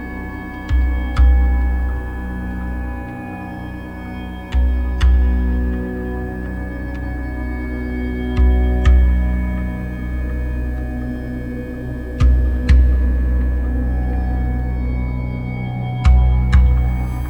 At the krypta of Galeria Podzemka.
you can find more informations here:
soundmap Chisinau - topographic field recordings, sound art installations and social ambiences